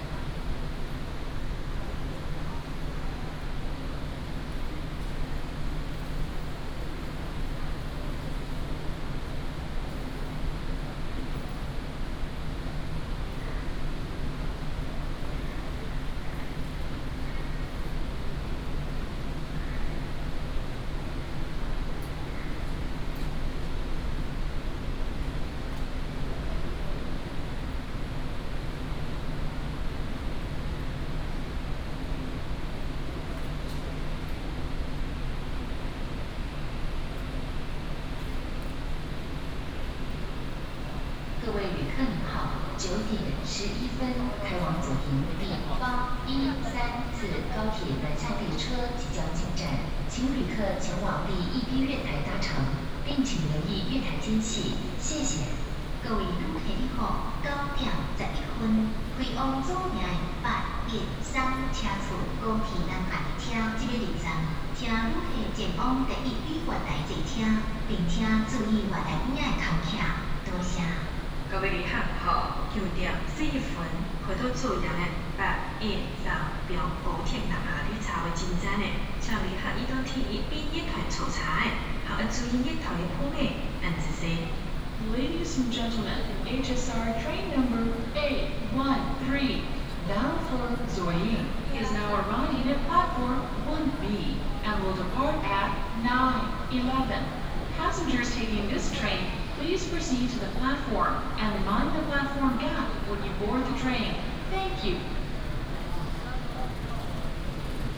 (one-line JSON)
{"title": "Taipei, Taiwan - The train travels", "date": "2017-01-17 09:02:00", "description": "Walking in the station platform, The train travels, Station Message Broadcast", "latitude": "25.05", "longitude": "121.52", "altitude": "19", "timezone": "GMT+1"}